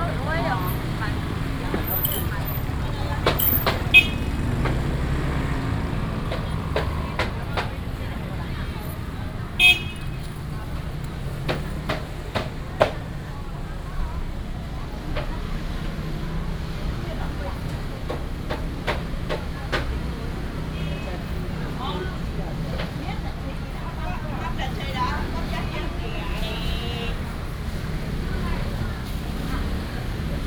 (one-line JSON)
{"title": "新社區果菜市場, Taichung City - Traditional market", "date": "2017-09-19 07:59:00", "description": "Walking in the traditional market, vendors peddling, traffic sound, Binaural recordings, Sony PCM D100+ Soundman OKM II", "latitude": "24.24", "longitude": "120.81", "altitude": "438", "timezone": "Asia/Taipei"}